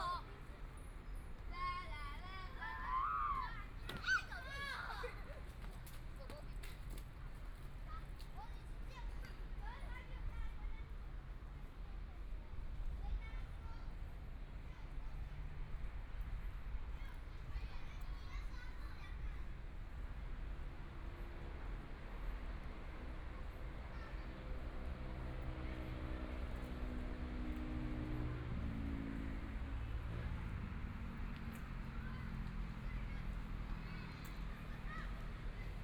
Standing next to school, Students in the game area
Please turn up the volume
Binaural recordings, Zoom H4n+ Soundman OKM II